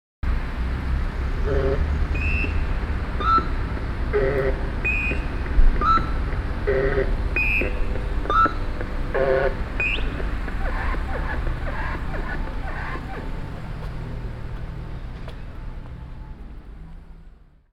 Coimbra: next to main post office - Pedestrian Crossing Song 1
crossing the road, accompanied by androidlike chirps & burps